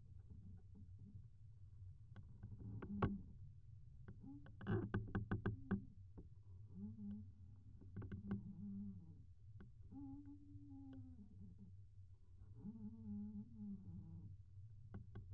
Lukniai, Lithuania, rubbing trees
contact microphones on a branch of tree rubing to other tree in a wind
12 April, 15:35